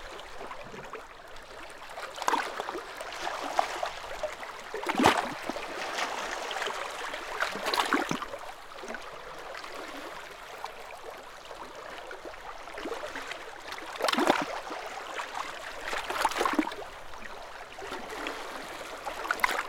Norrmjöle klappuden rocks. Sea on rocks.
Sea lapping against rocks on coastline.
Umeå, Sweden, 17 June 2011